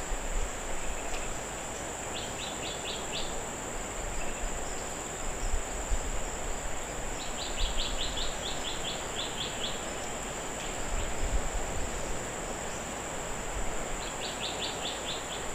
Jalan Similajau National Park, Bintulu, Sarawak, Maleisië - songbird and sea in Similajau NP
small black and white birds with relatively big voices at the sseaqside inb Similajau National Park. We called them magpie finch, because that's what they look like to an European swampdweller. Similajau is a quiet amazing place ideal to relax.